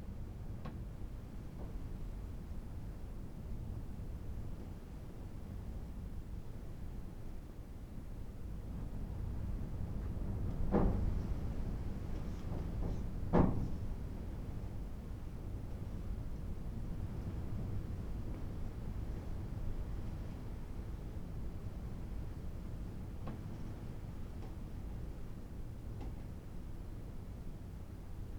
Caleta Maria, Región de Magallanes y de la Antártica Chilena, Chile - storm log - caleta maria storm outside
Inside Caleta Maria hut, storm outside at night, wind W 60km/h
Founded in 1942, Caleta Maria sawmill was the last of the great lumber stablishments placed in the shore of the Almirantazgo sound.